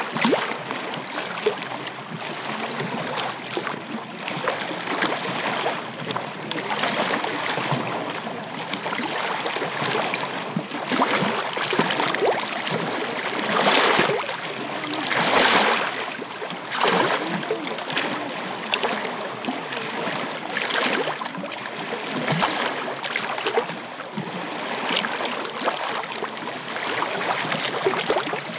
Lithuania - Lagoon Coast
Recordist: Raimonda Diskaitė
Description: At the start of the north pier, on the coast of the lagoon. Waves crashing, duck sounds and people talking in the distance. Recorded with ZOOM H2N Handy Recorder.
Nida, Lithuania, 1 August 2016, ~12:00